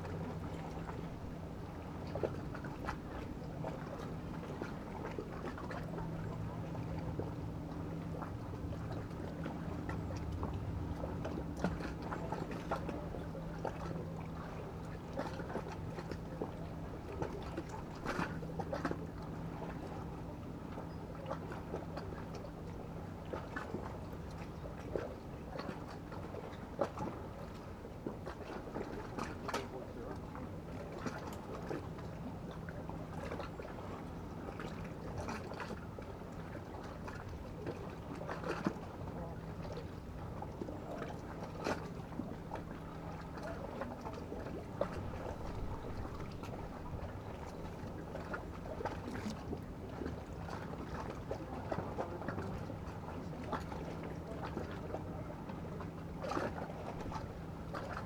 Müggelsee, Köpenick, Berlin, Deutschland - pier ambience
sitting on the pier in the early autumn sun, at Müggelsee near Berlin
(Sony PCM D50)
Berlin, Germany, 25 September 2016, 14:55